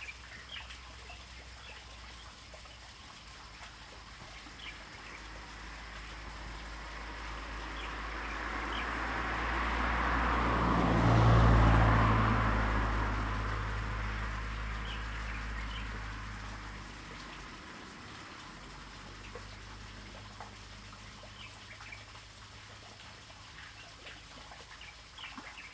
玉長公路, Fuli Township - Birds and Water
Birds singing, Water sound
Zoom H2n MS+XY
Hualien County, Taiwan, 9 October 2014, ~07:00